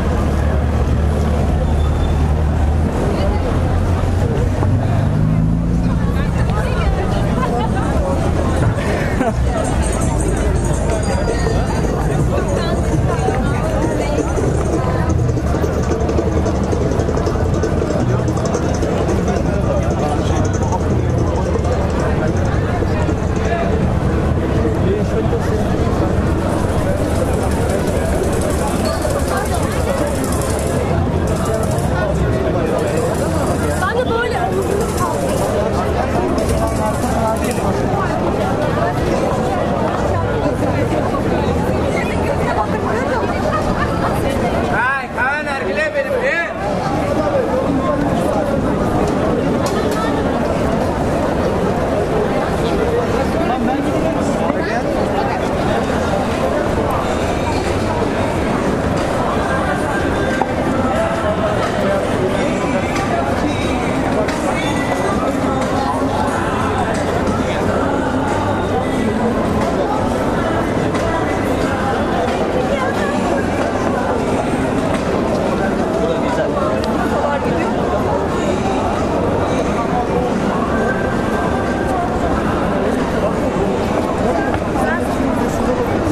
Istanbul, Istiklal caddesi at night
Istiklal Caddesi, the street of the many manias. Whatever you do expect, its there. If there is such a thing as the aesthetics of the crowds, it comes to a climax in this place during the night. The result is a sonic conundrum. The recording was made walking down the street for approximately 500 meters.